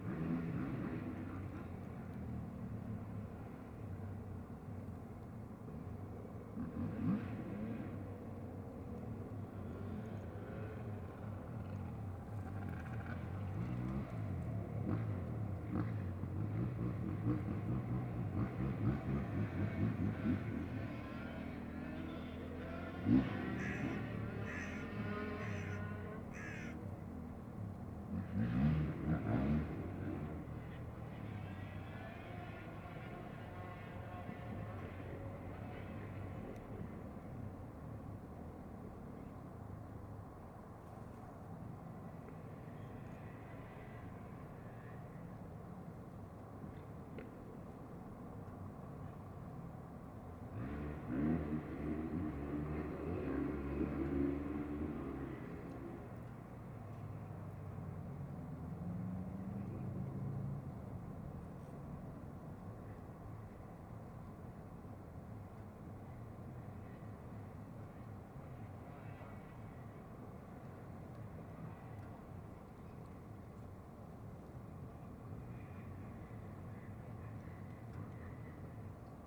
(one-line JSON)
{"title": "Riversdale Way, Newcastle upon Tyne, UK - Newburn Hough Industrial Estate", "date": "2020-02-08 14:02:00", "description": "Recorded near Hanson plant with a Tascam DR-05 placed in an WeatherWriter clipboard as a windshield. Noise of scrambling motorcycles from adjacent land.", "latitude": "54.97", "longitude": "-1.72", "altitude": "5", "timezone": "Europe/London"}